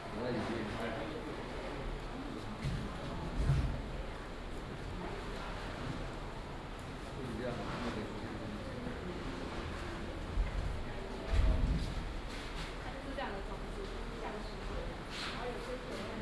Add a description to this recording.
Exhibition arrangement, The original staff quarters, The scene turned into art exhibition space